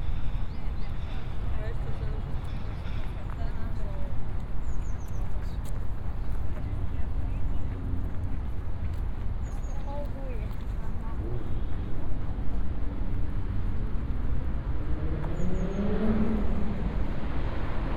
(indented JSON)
{
  "title": "Place de la Concorde, Paris, France - (382) Traffic at Champs-Élysées",
  "date": "2018-09-27 16:53:00",
  "description": "Recording from Place de la Concorde - heavy traffic horns and sirens at Champs-Élysées.\nrecorded with Soundman OKM + Sony D100\nsound posted by Katarzyna Trzeciak",
  "latitude": "48.87",
  "longitude": "2.32",
  "altitude": "33",
  "timezone": "Europe/Paris"
}